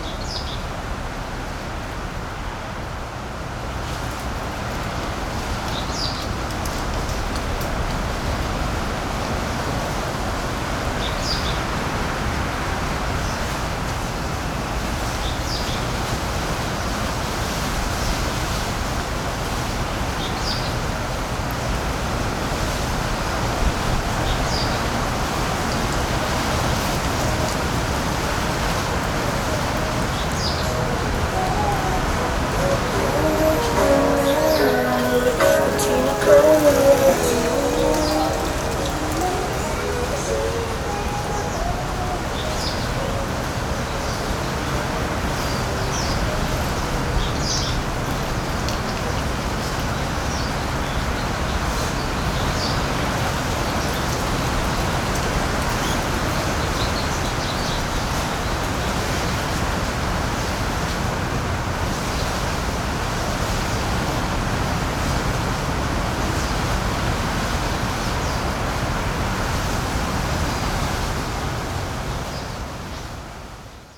Zhongzheng Rd., 淡水區., New Taipei City - Windy
Windy, Birds singing, Traffic Sound
Sony PCM D50